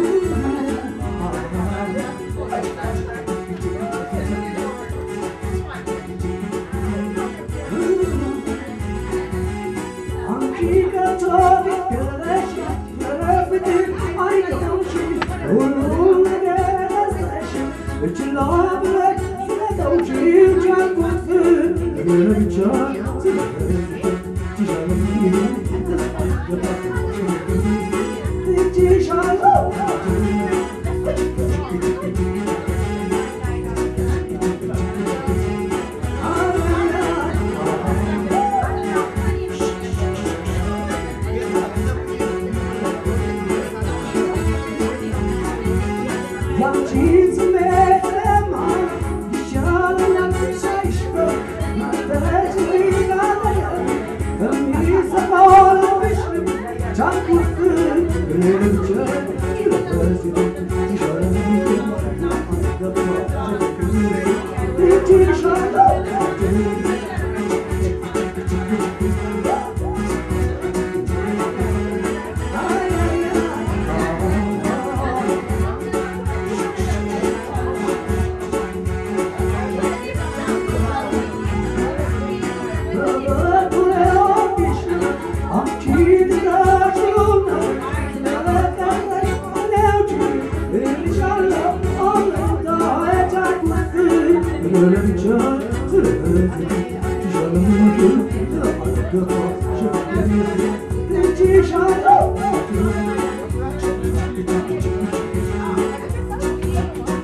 At Dashen Bet, all evenings, two men and a woman interpret popular and traditional songs. In this sound, the most old man sings and dances (better than Tom Jones).
Au Dashen Bet, tous les soirs, deux hommes et une femme interprètent des chansons populaires et traditionnelles. Dans ce son, l' homme le plus vieux les chante et danse (mieux Que Tom Jones).
Addis Ababa, Ethiopia, January 2015